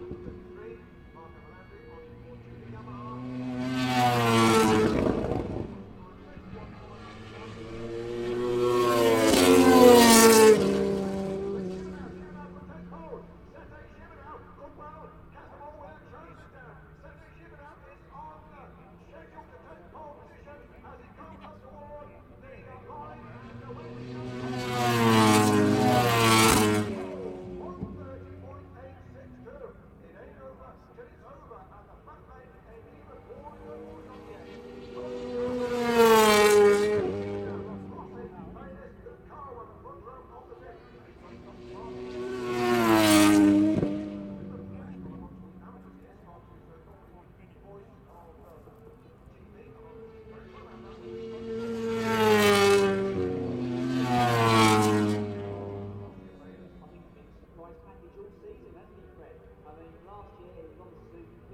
Castle Donington, UK - British Motorcycle Grand Prix 2003 ... moto grandprix ...
British Motorcycle Grand Prix 2003 ... Qualifying part two ... 990s and two strokes ... one point mic to minidisk ...